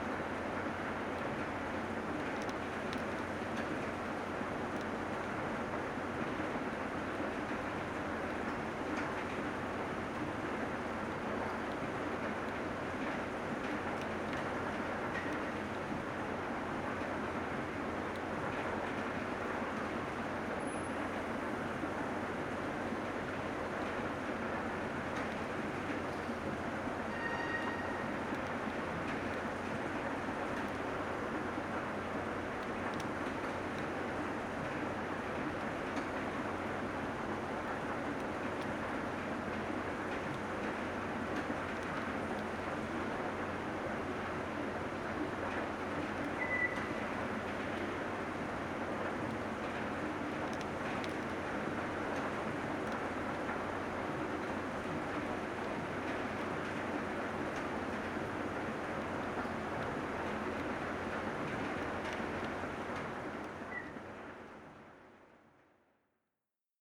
대한민국 서울특별시 서초구 반포4동 고속터미널 4층 - Seoul Express Bus Terminal, 4F, Old Escalator
Seoul Express Bus Terminal, 4F, old escalator making low clanging noise with no passenger around.
서울 고속버스터미널 경부선 4층, 오래된 에스컬레이터 소음
9 September 2019, 17:21